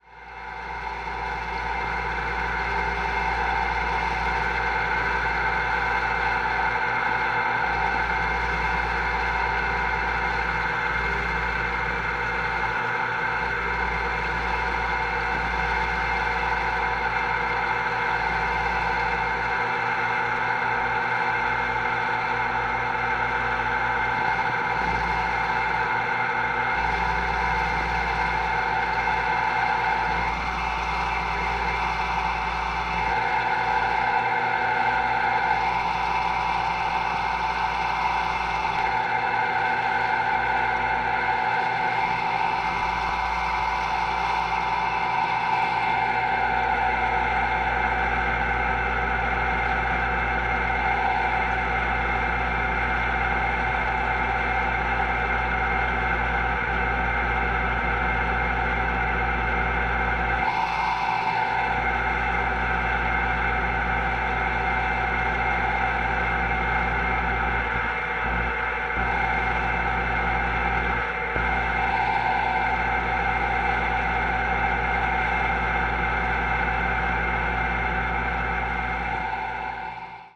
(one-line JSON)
{"title": "East Austin, Austin, TX, USA - Contact AC Drone", "date": "2015-07-19 16:30:00", "description": "Homa made contact mic, a window unit and a Marantz PM661", "latitude": "30.28", "longitude": "-97.72", "altitude": "188", "timezone": "America/Chicago"}